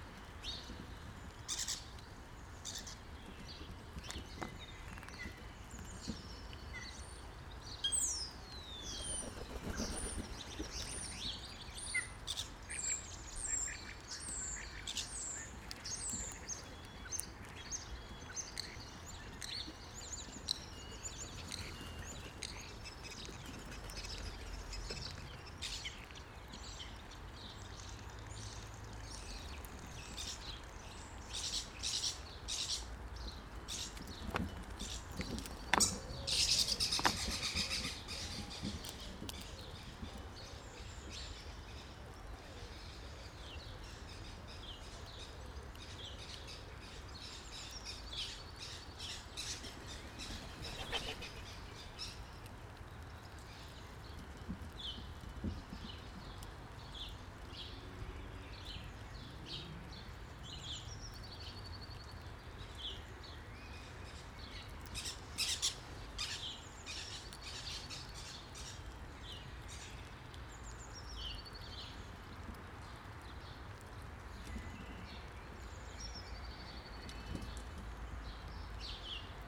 Harp Meadow Ln, Colchester, UK - An hour in the life of a bird-feeder 2019.
In my parents garden in Colchester it is full of wildlife, include great tits, blue tits, house sparrows, wood pigeons and doves, also the odd squirrel or two. In this recording I recorded early morning for about an hour, listening from inside recording over 100m of microphone cable. Had a few interesting sounds around the mic!